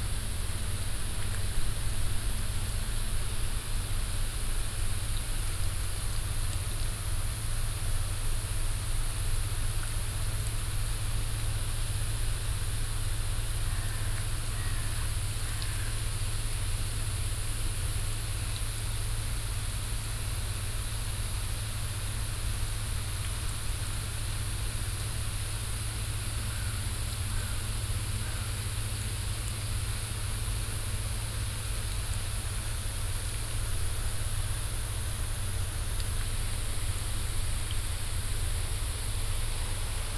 Inside another green house. Here with activated water sprinkler. The sound of the sprayed water in the long plastic folio tube.
international topographic field recordings, ambiences and scapes